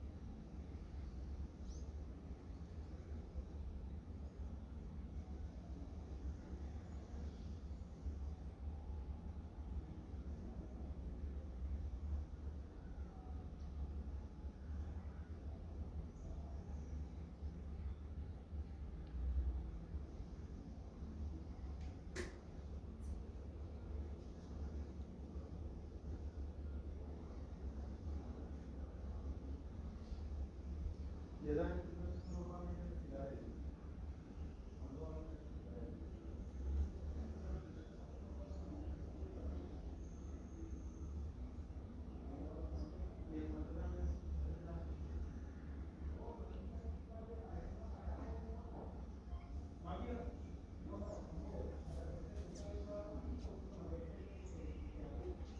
Cra., Medellín, Antioquia, Colombia - Ambiente barrio
Noviembre 9. El Poblado, Transversal 2
6.201793, -75.563104
5:40 am
Coordenadas:
Barrio el poblado, transversal 2
Descripción: Sonido en la madrugada en un barrio en el poblado.
Sonido tónico: Ambiente y sonido atmosfera del lugar.
Señal sonora: Personas que se escuchan hablando a veces en el fondo.
Técnica: Micrófono Estéreo con el celular.
Tiempo: 2:29 minutos
Integrantes:
Juan José González
Isabel Mendoza Van-Arcken
Stiven López Villa
Manuela Chaverra
November 2021